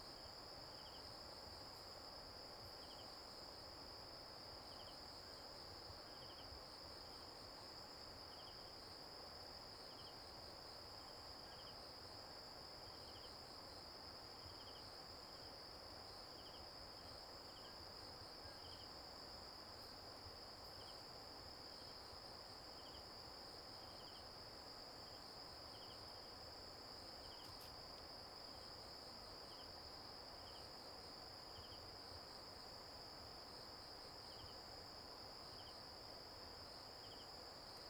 達保農場三區, 達仁鄉台東縣 - late at night
Late at night in the mountains, Bird song, Insect noise, Stream sound
Zoom H2n MS+XY